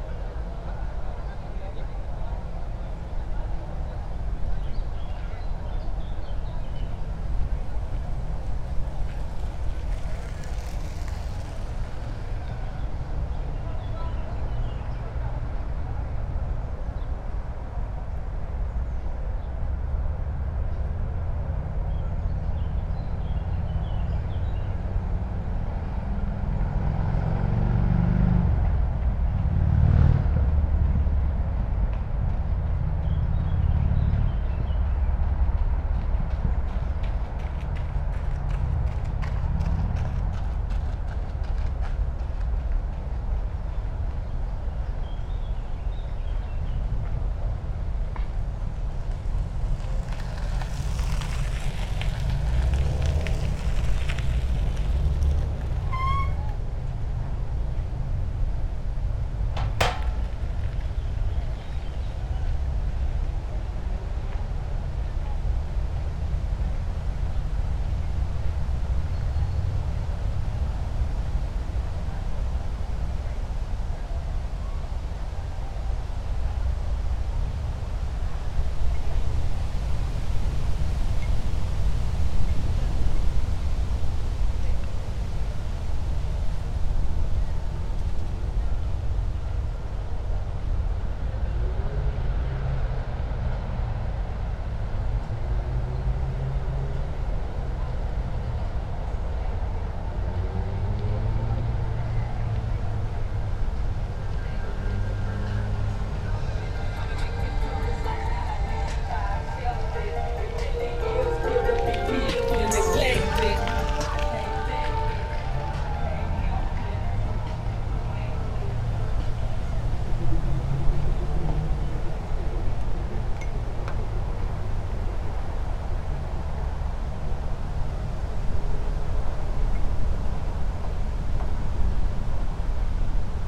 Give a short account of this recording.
This recording happened next to the monument to the homosexuals persecuted by the Nazi.